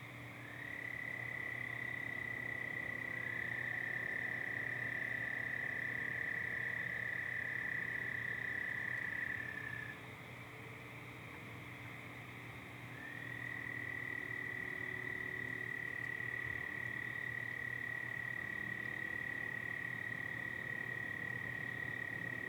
Waters Edge - Swamp Sounds
After a few days of rain the swamp has become rather noisy. There's also some other neighborhood sounds like barking dogs, passing traffic, and maybe some neighbors talking in the distance
Washington County, Minnesota, United States, 13 May